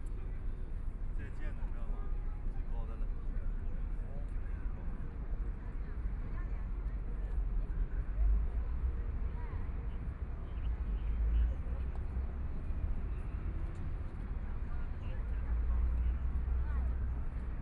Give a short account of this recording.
sound of the Boat traveling through, Many tourists, In the back of the clock tower chimes, Binaural recordings, Zoom H6+ Soundman OKM II